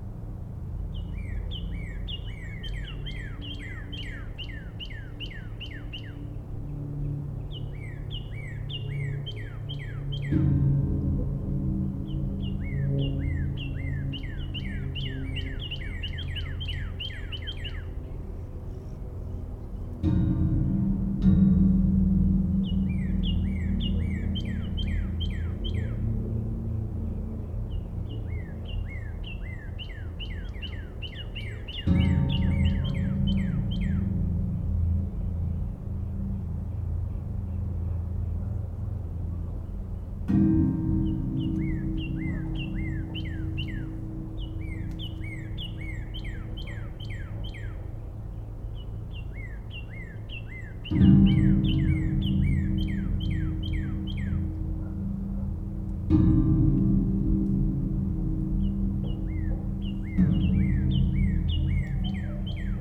playing the railings of a metal walking bridge. cardinals sing above.